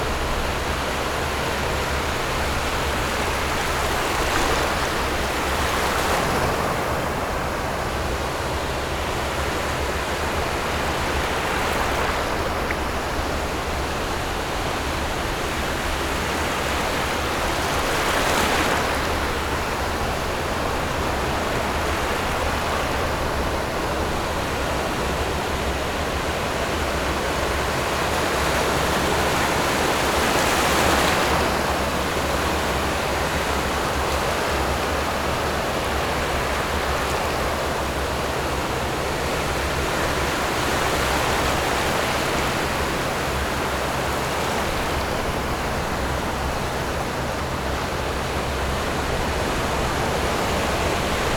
頭城鎮港口里, Yilan County - Sound of the waves
Hot weather, In the beach, Sound of the waves, There are boats on the distant sea
Zoom H6 MS+ Rode NT4
Toucheng Township, Yilan County, Taiwan